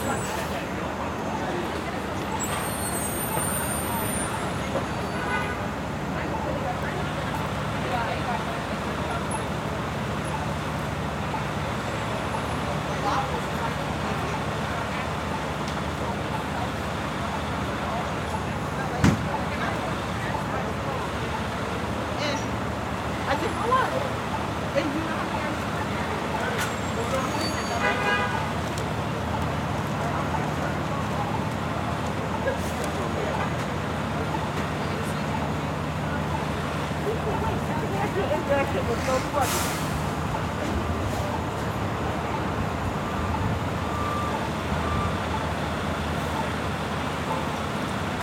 Willoughby St, Brooklyn, NY, USA - Street ambiance near a pizza joint
Street ambiance next to a pizza joint, Brooklyn Downtown.